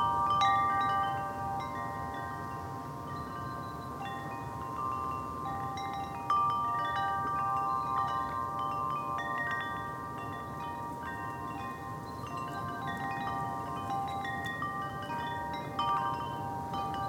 My friend's Garden, Drummagh, Co. Leitrim, Ireland - Windchimes and Guide/Assistance Dog
This is the sound of my friend's windchimes, and the lovely situation of us, and her Guide/Assistance Dog hanging out in the garden and listening to them. This is a quiet neighbourhood on the outskirts of Carrick, so you can just hear a bit of someone digging nearby, the birds in the trees and the swelling of the wind as it passes over. We shared some nice moments standing there, my friend smoking, the chimes chiming, the dog sniffing the recorder, and the sunshine shining, with just the tiniest chill in the air. Beautiful sounds, sorry for the bit of wind distortion here and there in the recording.
25 March 2014, ~12:00